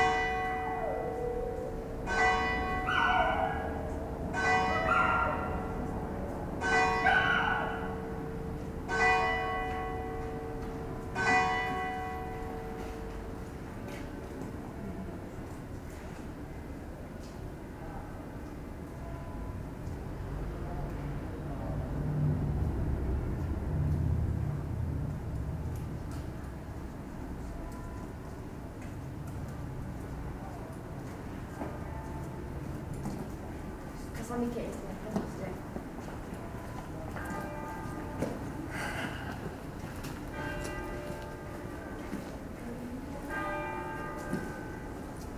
Via Bossi, Pavia, Italy - barking again
Darker outside, the barks of the dog gets louder...